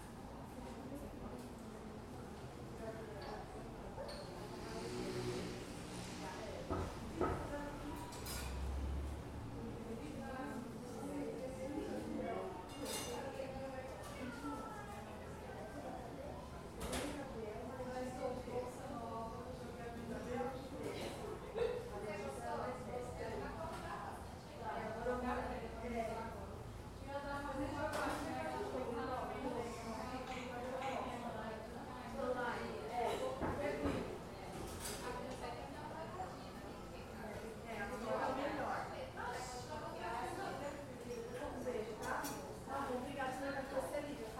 This is a Coffee Shop called Book e Café where is located next an elementary school. You can listen to kids playing around sometimes. It was recorded by a Tascam DR-05 placed on a table next a big glass window.
2 May 2019, 16:34